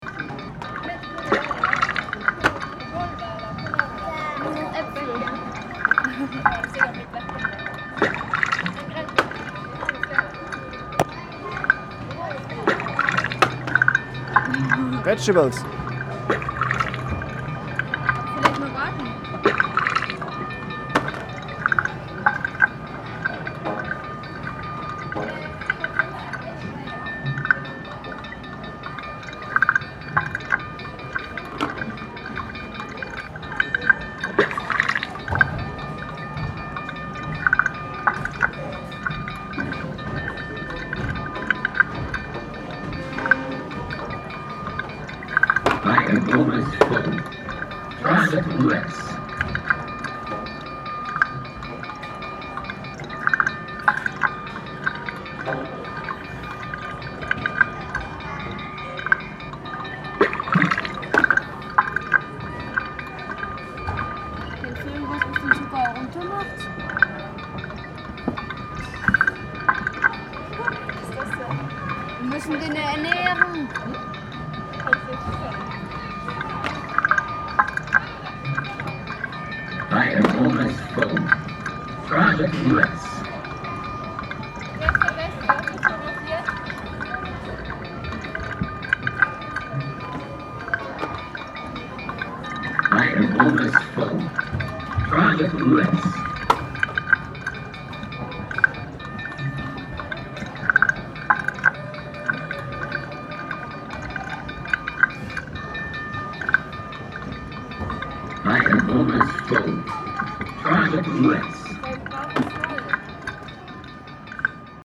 Møhlenpris, Bergen, Norwegen - Bergen, science center Vilvite, food roboter
Inside the Bergen science center Vilvite. The sound of a food roboter, that can be feed with artificial food signs and reads out the calories or tells when he is fully feeded or overfeeded... In the background voices of the international visitors.
international sound scapes - topographic field recordings and social ambiences